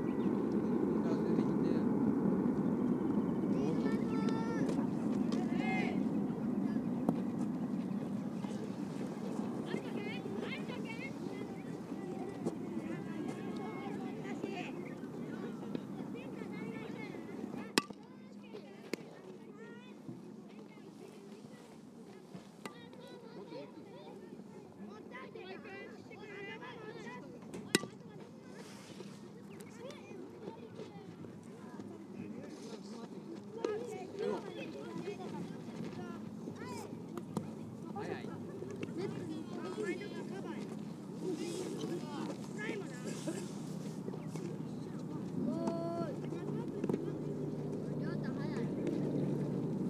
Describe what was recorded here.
Little boys are doing batting practice. We can hear the pig of a metallic bat striking balls that the coach pitches gently. Trains and car traffic can be heard in the background.